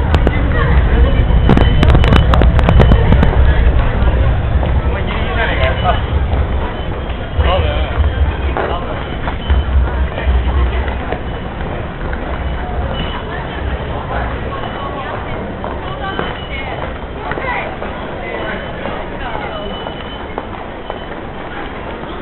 Feierabend at the ticketgate 20.12.2007